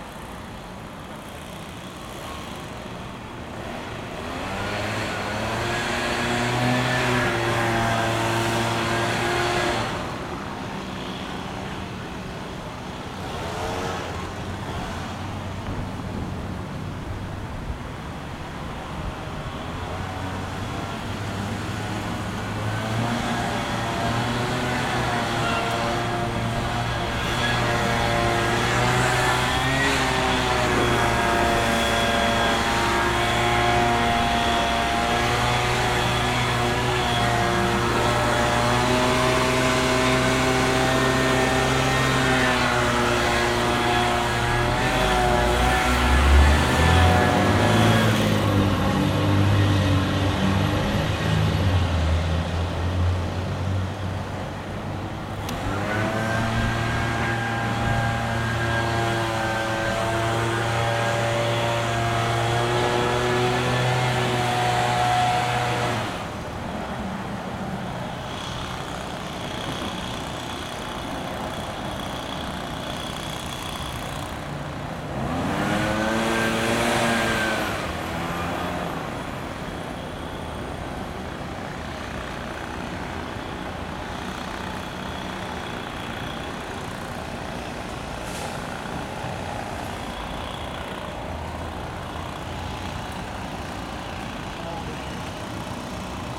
Park Ave, Baltimore, MD, USA - Leaf blower and traffic
A recording of someone using a leaf blower nearby as well as the sounds of local traffic. Recorded using the onboard H4n condensor microphones.